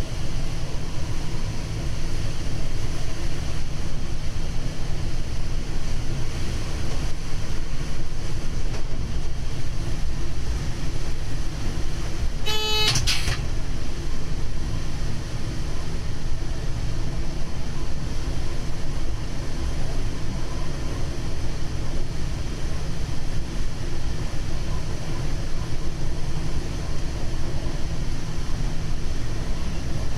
Train, Verviers - Pepinster
A ride in the driver's cabin of a Belgian AM66 electric railcar going from Verviers Central to Pepinster. Bell = green signal, buzzer = dead man's circuit. Binaural recording with Zoom H2 and OKM earmics.